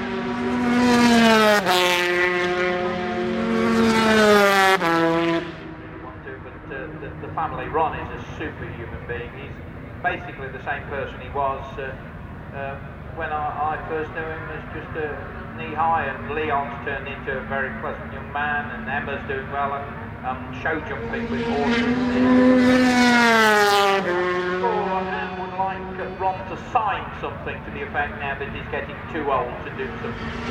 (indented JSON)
{
  "title": "Castle Donington, UK - British Motorcycle Grand Prix 2002 ... 250 ...",
  "date": "2002-07-13 15:00:00",
  "description": "British Motorcycle Grand Prix 2002 ... 250 qualifying ... one point stereo mic to minidisk ... commentary ... time optional ...",
  "latitude": "52.83",
  "longitude": "-1.37",
  "altitude": "81",
  "timezone": "Europe/London"
}